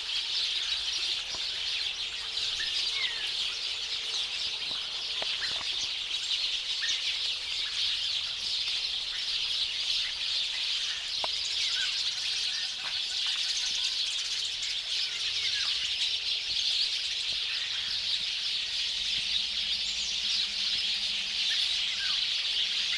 Almeidinha, Guarda, Portugal - Evening Birds
Place: Almeidinha, Guarda, Portugal
Recorder: Olympus LS-P4
Situation:Birds chirping loudly on top of a tree in a portuguese village up north.
Recorded without any windshield, using the built-in 3 microphones of the recorder.